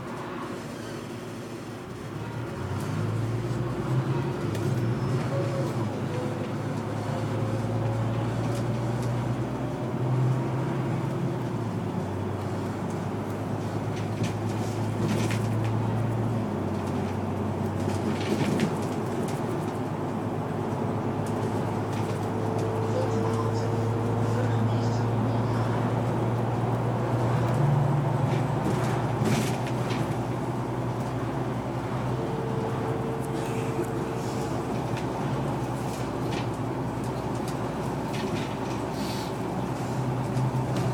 Valkenswaard, Nederland - Busdrive to Eindhoven
In a articulated bus from Valkenswaard to Eindhoven
Valkenswaard, The Netherlands, 28 February, 18:00